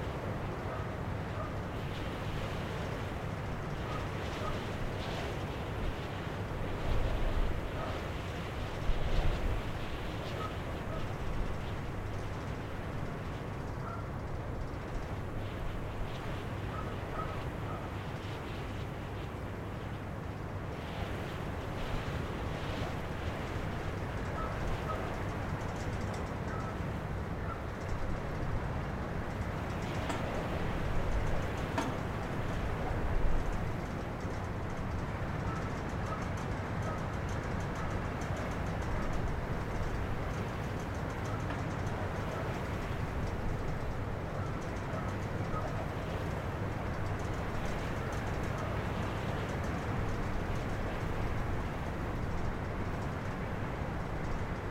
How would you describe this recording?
hilltop, wind SW 38 km/h, ZOOM F1, XYH-6 cap, Cerro Sombrero was founded in 1958 as a residential and services centre for the national Petroleum Company (ENAP) in Tierra del Fuego.